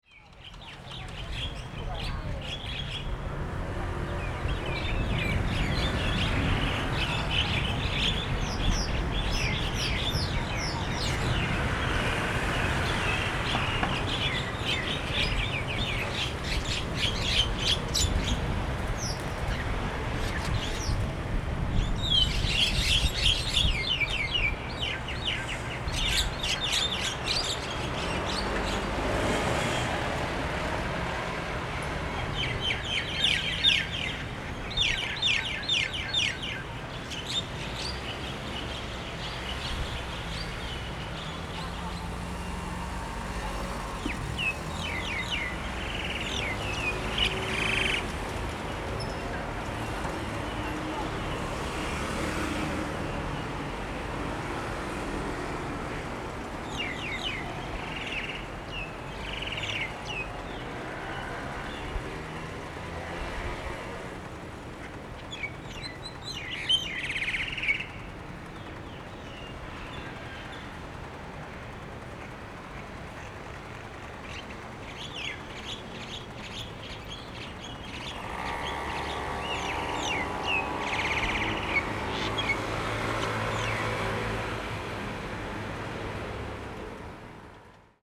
A flock of birds gathered at the corner birdsong, Sony ECM-MS907, Sony Hi-MD MZ-RH1
25 February 2012, 18:10